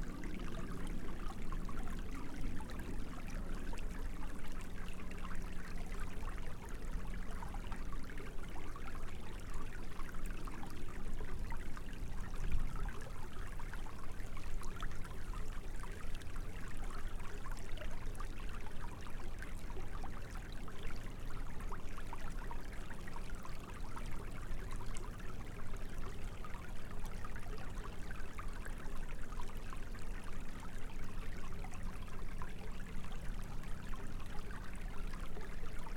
Keifer Creek, Ballwin, Missouri, USA - Keifer Creek
Environmental recording at this bend in Keifer Creek. Creek named for the Keefer family. Recording includes distant construction equipment sounds from Kiefer Creek Road.